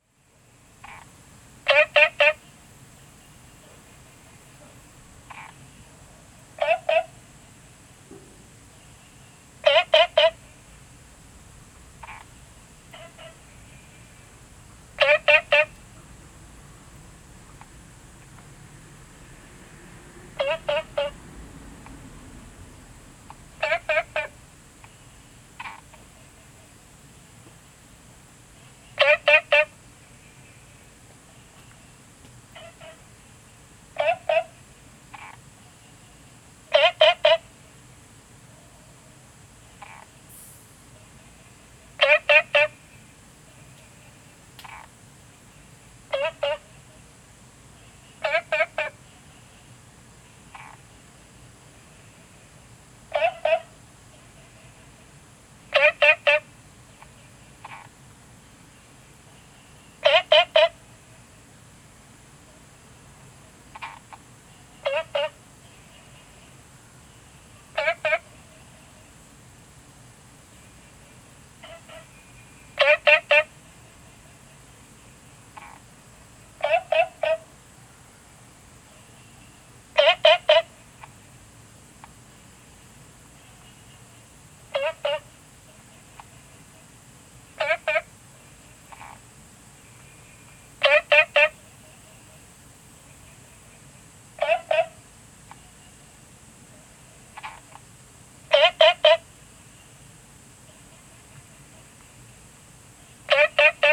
青蛙ㄚ婆ㄟ家, 桃米里, Taiwan - Small ecological pool
Frogs chirping, Small ecological pool
Zoom H2n MS+XY